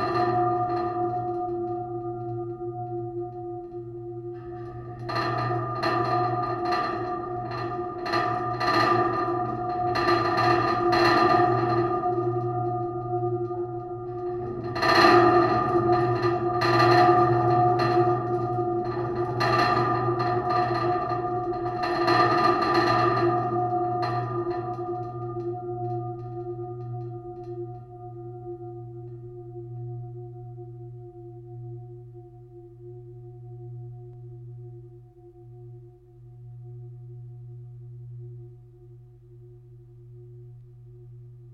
pont de Précy-sur-Oise, Pl. de la Gare, Précy-sur-Oise, France - Pont de Précy 3
Vibration of the adjacent pedestrian footbridge at the passage of cars on the bridge, recorded with Zoom H5+AKG C411.
Hauts-de-France, France métropolitaine, France, 7 January 2022, 18:38